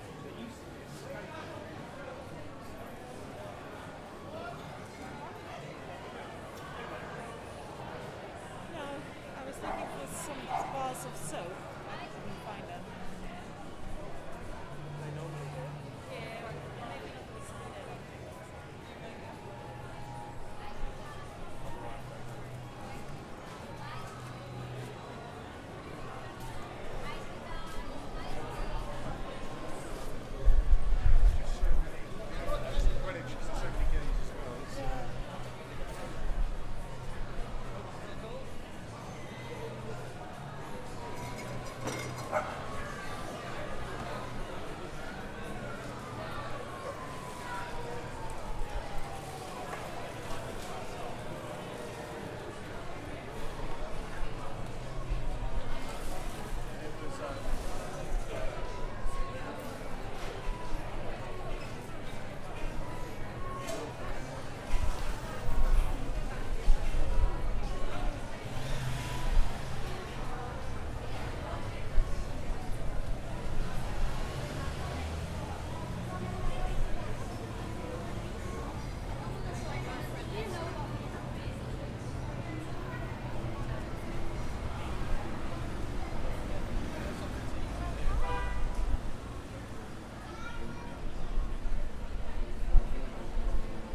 Greenwich Market, London, UK - A stroll through the market...
A walk through the indoor market in Greenwich. A comforting collage of ambient crowd burbling, snippets of conversation, and various music sources. Finally, we emerge back onto the street to find a trio of street musicians limbering up after a cigarette break. As you'll hear, my partner, Ulrika, didn't find the hand made soap she was looking for. Apparently, the stall-holder doesn't work Sundays.
England, United Kingdom, 1 August 2021